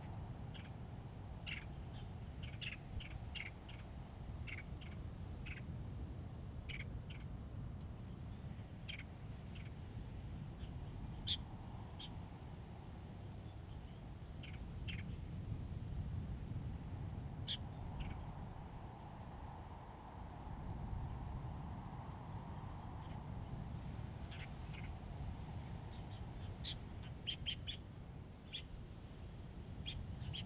南田村, Daren Township - Birdsong
Birdsong, In windbreaks, The weather is very hot
Zoom H2n MS +XY
Taitung County, Taiwan, 5 September 2014, 13:52